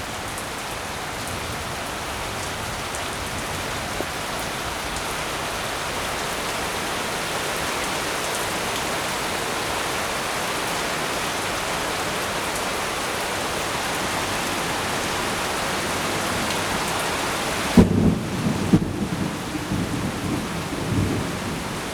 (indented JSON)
{
  "title": "Yonghe, New Taipei City - Heavy thundery showers",
  "date": "2010-08-12 15:19:00",
  "description": "Heavy thundery showers, Sony ECM-MS907, Sony Hi-MD MZ-RH1",
  "latitude": "25.00",
  "longitude": "121.52",
  "altitude": "20",
  "timezone": "Asia/Taipei"
}